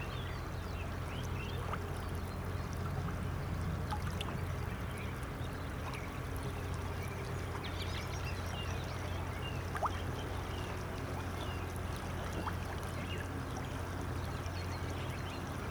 Tua, Portugal - Som do rio, Tua, Portugal. - River Douro near Tua, Portugal.
Rio Douro no Tua. Mapa Sonoro do rio Douro. The river Douro next to Tua in Portugal. Douro River Sound Map
3 March 2012